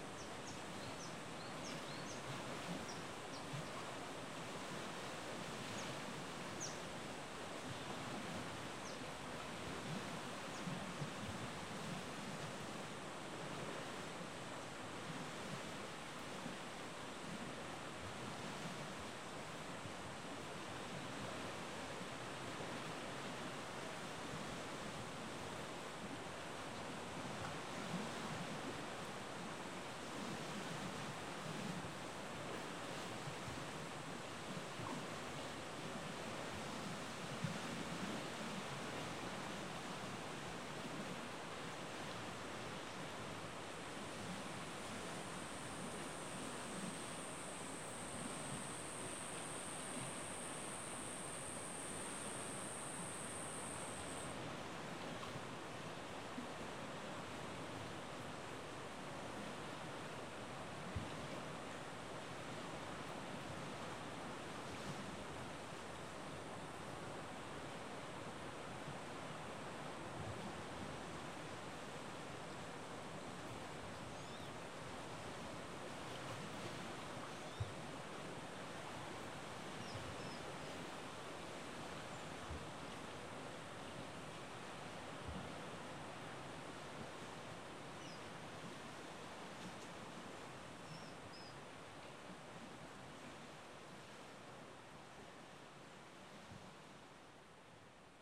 Cape Tribulation, QLD, Australia - myall beach in the morning
by the edge of the thick, spindly coastal vegetation.
recorded with an AT BP4025 into an Olympus LS-100.
Cape Tribulation QLD, Australia, 24 December 2013